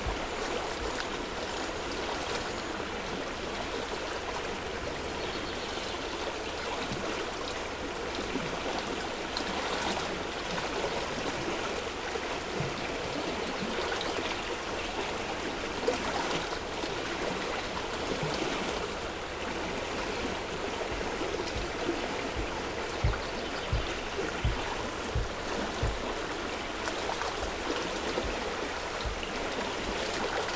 Franclens, France - The Rhône river
The Rhone is a majestic river flowing from Switzerland to a place called Delta in the Camargue area. This river is especially known for its urban course in the Rhone valley, marked by an intense industrial activity and an highly developed business using skippers by river. In Franclens village where we were, Rhone river is located upstream of Lyon, not navigable and in the forest.
However it's not quiet. Contrariwise, water is dominated by the hydroelectric dams activity ; for us it's the Genissiat dam. During this recording, the Rhone underwent an enormous dump. Water violently leaves the bed. Unlike a filling, this activity establishes considerable turbulences and noise. It's a tormented atmosphere. But, at the heart of nature and although waters are very lively, it's still and always a soothing recording.
Le Rhône est un fleuve majestueux prenant sa source en Suisse et débouchant dans le Delta en Camargue.
13 June